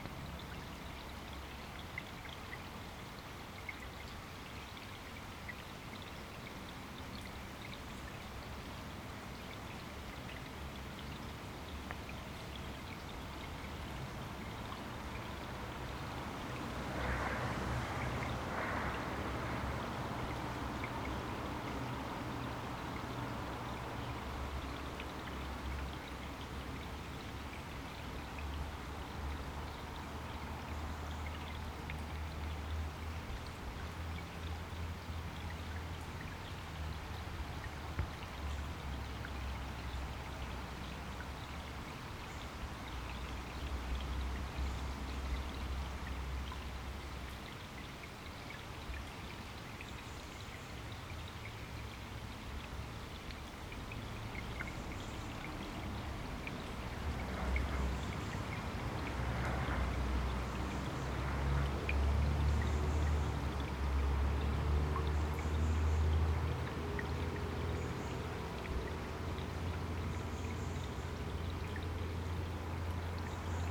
Walking Festival of Sound
13 October 2019
Under the bridge
Stereo recording (L track DPA4060 omni microphone; R track Aquarian 2 hydrophone), Sound Devices MixPre6
Location
Riverside footpath by the Ouseburn as it passes below the road at Byker Bank
Byker Bank
Newcastle upon Tyne NE6 1LN
54.973393, -1.590369